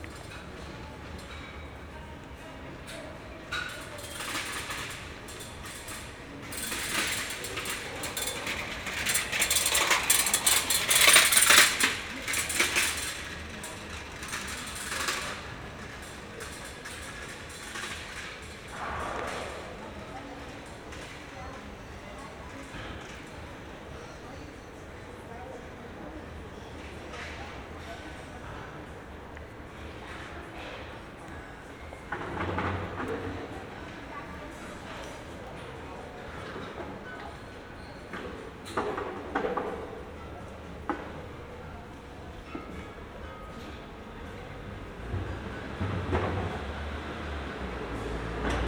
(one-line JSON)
{"title": "berlin, friedelstraße: vor w. - the city, the country & me: in front of café w.", "date": "2012-08-28 00:07:00", "description": "nice summer evening, i seemed to be the last guest of the wine café enjoying a last glass of wine while the waitress was busy inside\nthe city, the country & me: august 28, 2012", "latitude": "52.49", "longitude": "13.43", "altitude": "46", "timezone": "Europe/Berlin"}